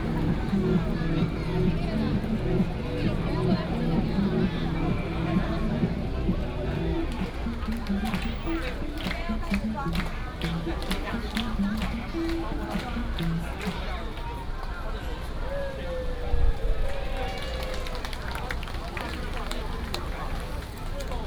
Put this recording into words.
In the temples square, Community residents festivals, Vendors, Children are performing, Binaural recordings, Sony PCM D100+ Soundman OKM II